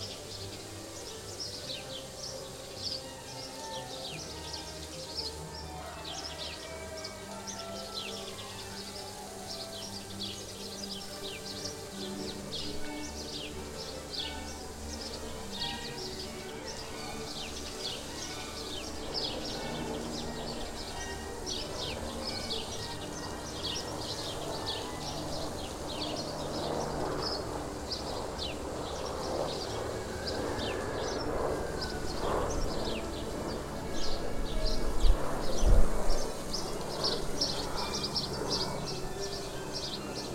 Derech HaTatspit, Jerusalem, Israel - monastery backyard before summer sunset
The birds get ready for a summer sunset, the insects as well, the youth orchestra is preparing for the evening concert and the gardener hosing the orchard trees.